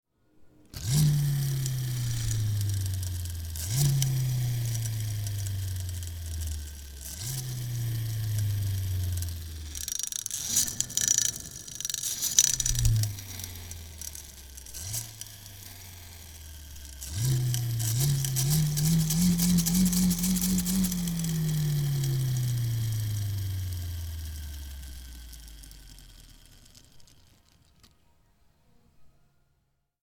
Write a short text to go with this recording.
20.02.2009 17:00 mechanisches spielzeug, handbetrieben, fliehkraft-effekt / mechanical toy, hand-driven, centrifugal force effect